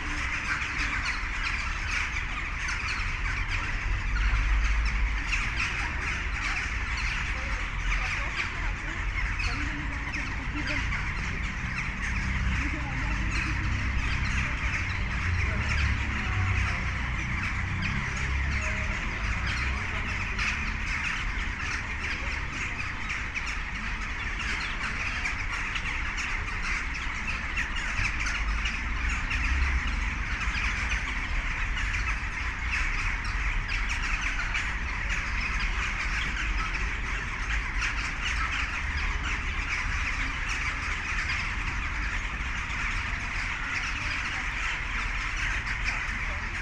NEW BORN, Prishtinë - Prishtina Crows
The city is famous for its crows. They also gather in the city center in the early evening and determine the sound sphere.
Komuna e Prishtinës / Opština Priština, Kosova / Kosovo